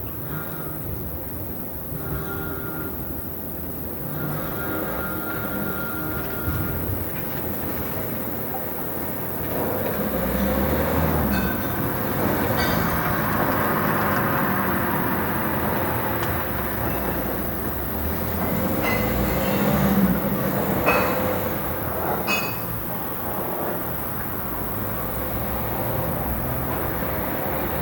France, Ille, a balcony at the opera / un balcon à l'opéra - A balcony at the opera / un balcon à l'opéra
Another 'hybrid' mix between natural sources and human sounds (acoustic as well as electroacoustic).
Unidentified orthoptera, small canal, radio/tv opera, kitchen noises & voices, passing cars, church bells...: it's 10 pm and i'm enjoying the night.
Ille-sur-Têt, France, 16 September 2010